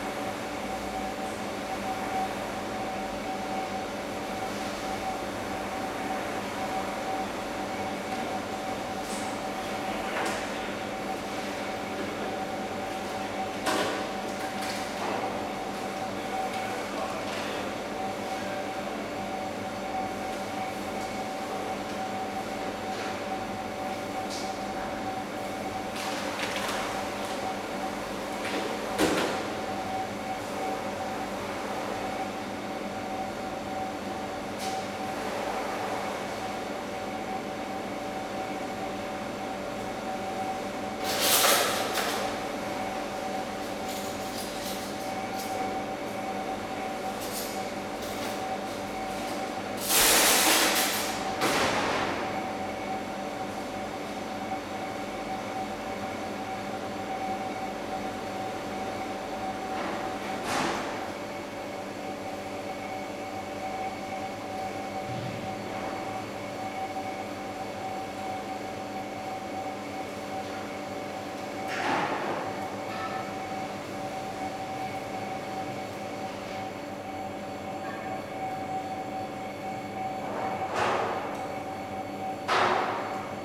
cafeteria of the german federal archives berlin-lichterfelde, lulled by the sound of refrigerators, kitchen staff preparing lunch
the city, the country & me: november 11, 2015